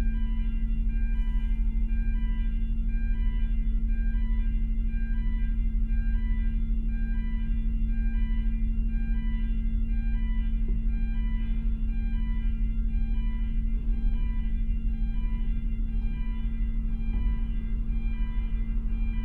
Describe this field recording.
Boarding the Kennecraig to Port Ellen ferry to Islay ... lavalier mics clipped to baseball cap ...